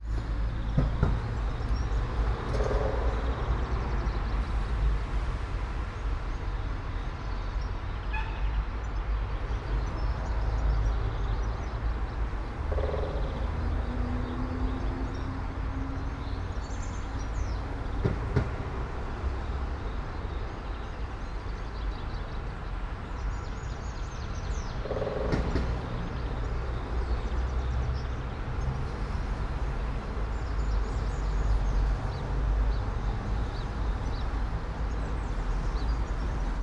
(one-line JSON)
{"title": "all the mornings of the ... - mar 7 2013 thu", "date": "2013-03-07 08:08:00", "latitude": "46.56", "longitude": "15.65", "altitude": "285", "timezone": "Europe/Ljubljana"}